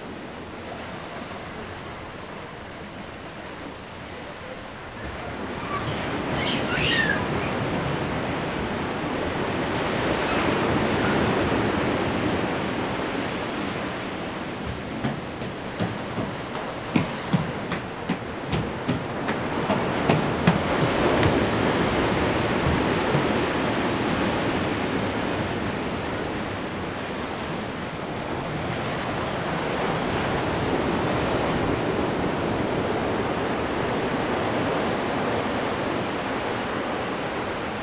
Brooklyn, NY, USA - Under the Boardwalk, Coney Island Beach.
Under the Boardwalk, Coney Island Beach.
Zoom H4n
8 November 2016, ~14:00